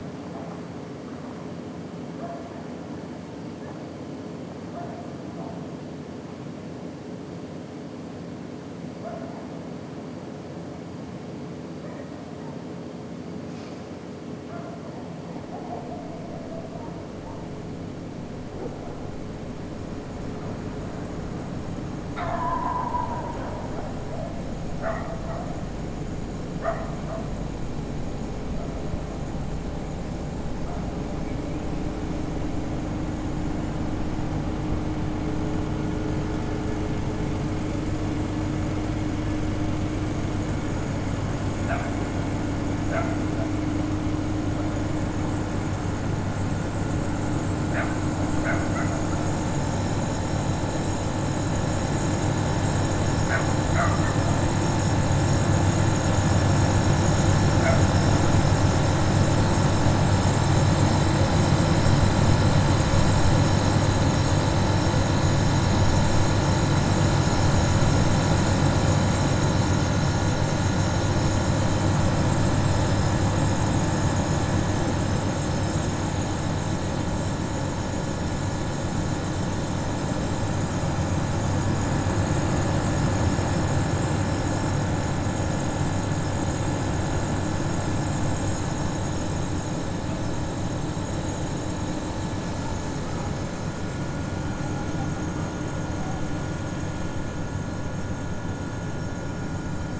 SBG, Cami de les Tres Creus / Bingrau - paseo nocturno
Un paseo cerca del parque infantil y la escuela municipal. Allí está aparcada una unidad móvil de medición medioambiental. El sonido continuo de sus motores destaca en la calma y los sonidos distantes de la noche.
16 July, 22:20